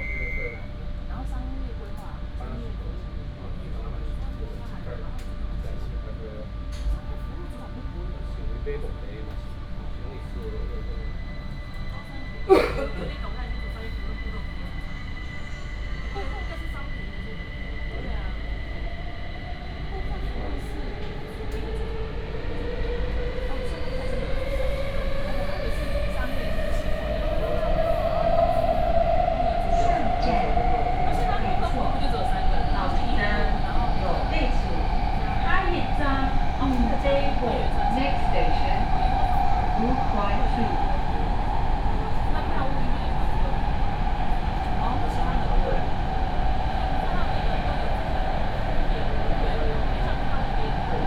Orange Line (KMRT), 苓雅區 Kaohsiung City - Take the MRT
Take the MRT, The Orange Line is an East-West line of the Kaohsiung Mass Rapid Transit in Kaohsiung